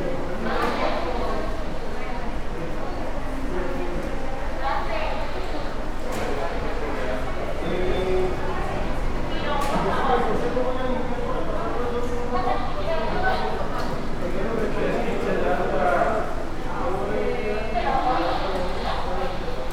Calle Lunik #105 · 1er piso Consultorio No. 108 Torre II en Médica Campestre, Futurama Monterrey, León, Gto., Mexico - En la entrada del Hospital Médica Campestre.
At the entrance of the Hospital Medica Campestre.
I made this recording on september 3rd, 2022, at 12:13 p.m.
I used a Tascam DR-05X with its built-in microphones and a Tascam WS-11 windshield.
Original Recording:
Type: Stereo
Esta grabación la hice el 3 de septiembre 2022 a las 12:13 horas.